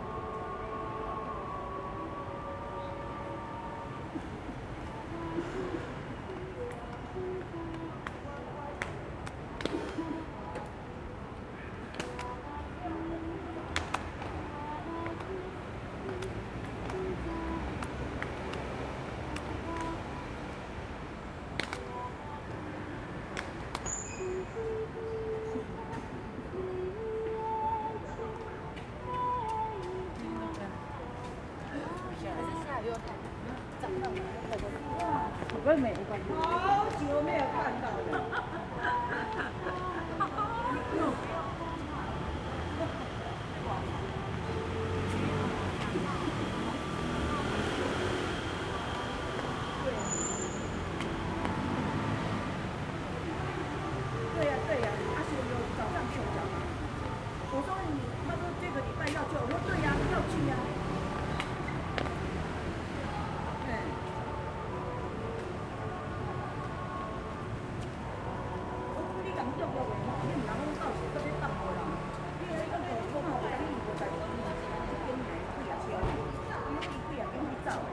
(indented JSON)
{
  "title": "Linjiang Park., Da’an Dist., Taipei City - in the Park",
  "date": "2012-02-13 20:09:00",
  "description": "Park at night, Traffic noise, in the Park, A group of middle-aged women are practicing dancing\nSony Hi-MD MZ-RH1 + Sony ECM-MS907",
  "latitude": "25.03",
  "longitude": "121.56",
  "altitude": "21",
  "timezone": "Asia/Taipei"
}